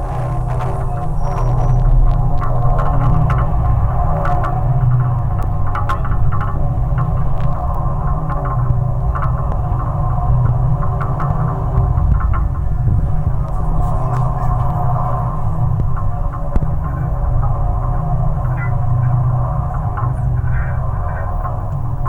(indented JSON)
{
  "title": "Brooklyn Bridge, New York, Low Cables",
  "latitude": "40.71",
  "longitude": "-74.00",
  "timezone": "GMT+1"
}